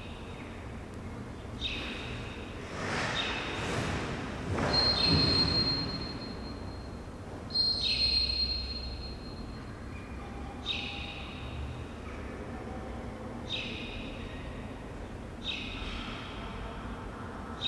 gym hall of a former school. only a bird and a motion sensor beep can be heard.
Tallinn, Estonia, 9 July 2011, 15:00